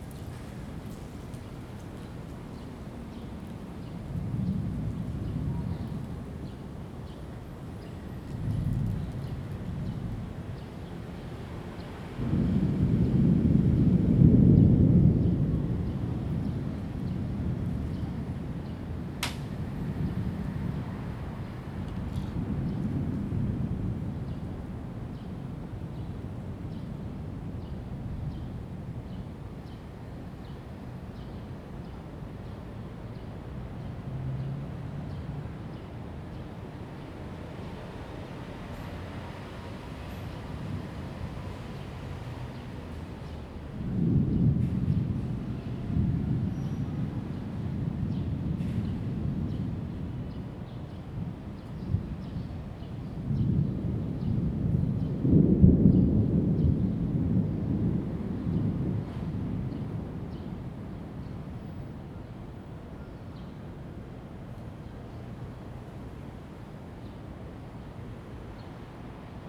敦安公園, 大安區, Taipei City - Thunder sound
in the Park, Thunder sound
Zoom H2n MS+XY